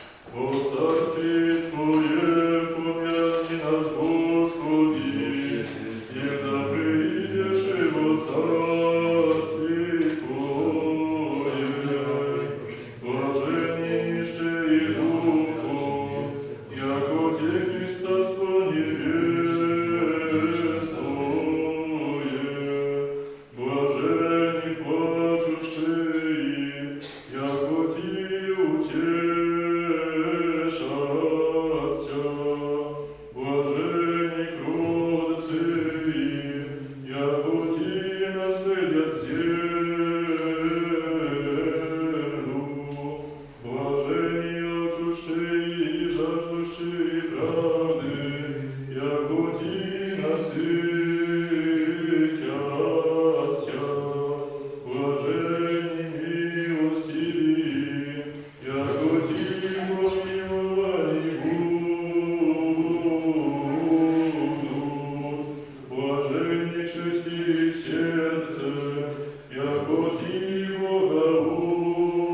Academic Church of the Holy Trinity at Iconographic School in Bielsk Podlaski. Divane Liturgy - fragments, celebrated by o.Leoncjusz Tofiluk, singing Marek
Bielsk Podlaski, Poland